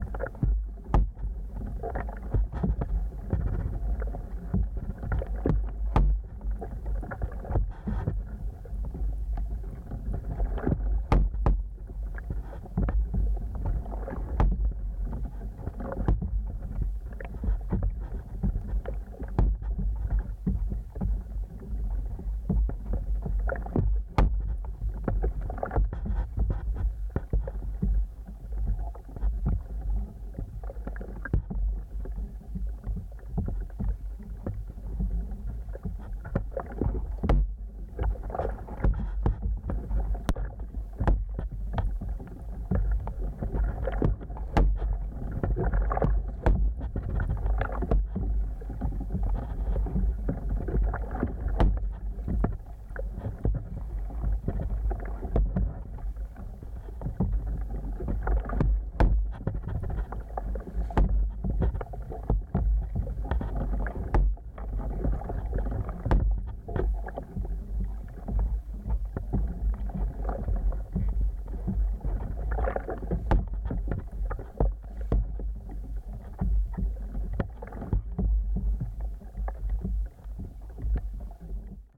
Coquina Beach Mangrove, Bradenton Beach, Florida, USA - Red Mangrove Prop Roots
Contact mics attached to red mangrove prop roots that move against each other with the changing surf.
Florida, United States, 22 March 2021